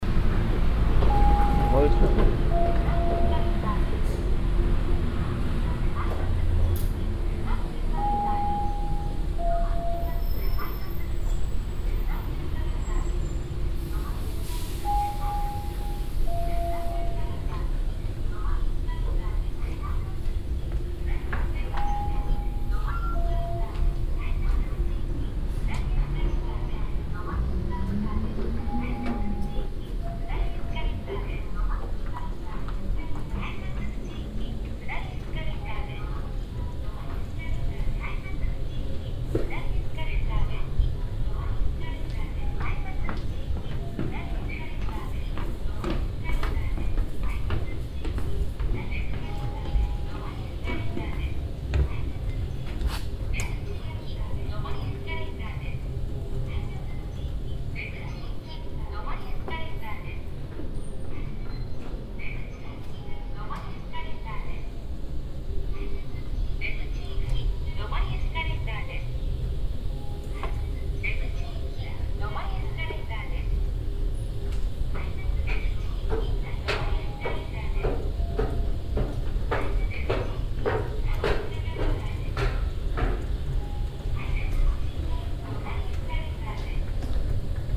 yokohama, subway entrance
In a staircase leading down to the subway. The sound of the moving staircases and a repeated signal plus automatic voice.
international city scapes - topographic field recordings and social ambiences
July 2011